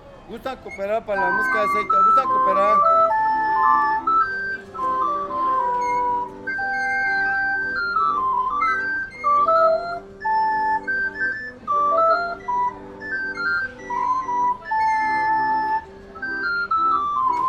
de Mayo, Centro histórico de Puebla, Puebla, Pue., Mexique - Puebla - Mexique
Puebla - Mexique
Ambiance sonore à l'entrée de la rue 5 de Mayo